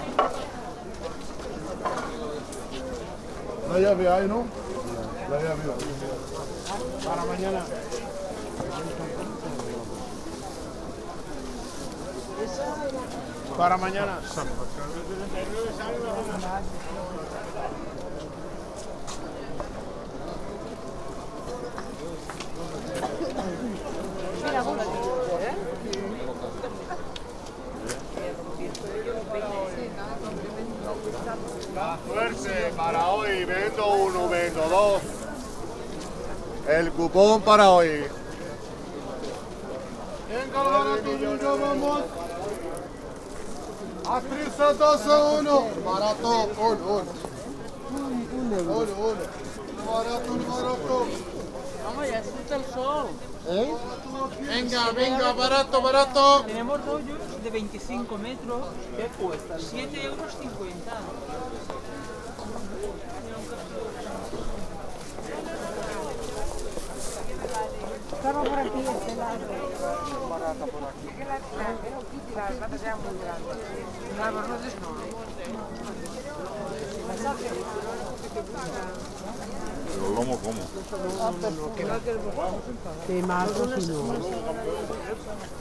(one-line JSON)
{"title": "Mercat dels Encants Vells", "date": "2011-02-11 12:03:00", "description": "Emblematic open-market of junk, old-kind objects and clothes.", "latitude": "41.40", "longitude": "2.19", "altitude": "15", "timezone": "Europe/Madrid"}